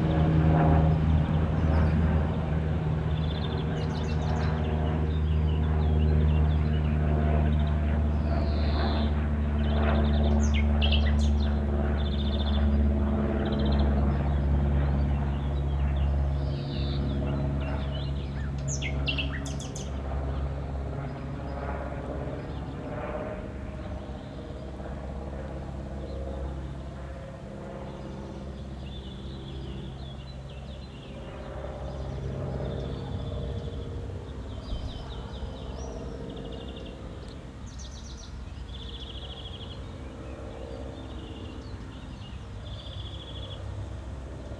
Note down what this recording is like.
Sonic exploration of areas affected by the planned federal motorway A100, Berlin. (SD702, Audio Technica BP4025)